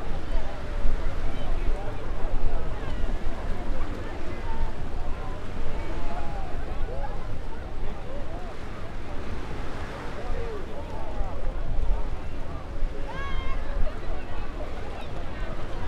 Mamaia Beach Promontory, Romania - Rocky Promontory on the Beach, Daytime
On a small headland made of tetrapods and other rocks fisherman gather alongside with tourists who want to take a break from the busy beach. The latter is still present in the soundscape with human noises, music rumble and boat-engine noises. Turning the microphone away from it and towards the rocks brings a different type of ambience, as the "generic" sea sound of waves crashing on the shore is less present and a calmer watery sound (almost akin to a lake) is present. Recorded on a Zoom F8 using a Superlux S502 ORTF Stereo Microphone.